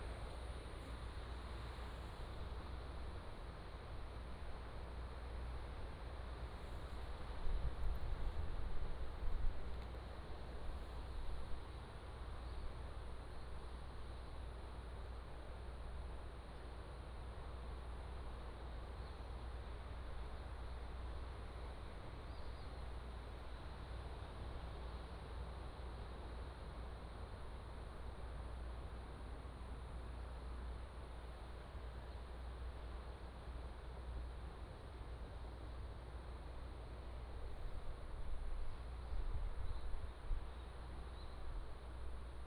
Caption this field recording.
the waves, Binaural recordings, Sony PCM D100+ Soundman OKM II